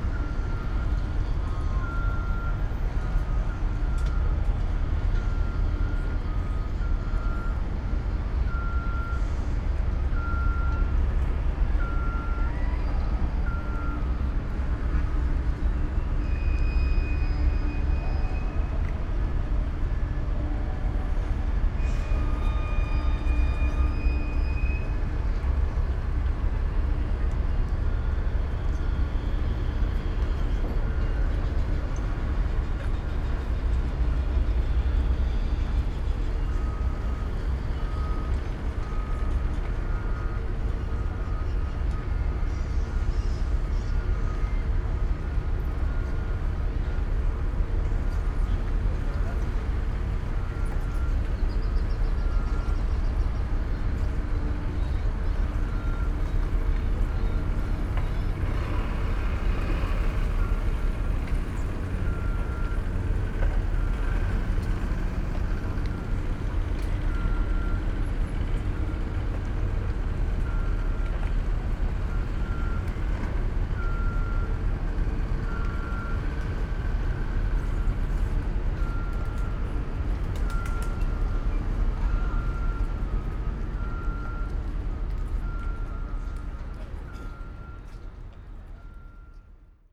Puerto, Valparaíso, Chile - harbour ambience
It's difficult to access the sea in Valparaiso, because of the harbour and military araeas. harbour ambience
(Sony PCM D50, DPA4060)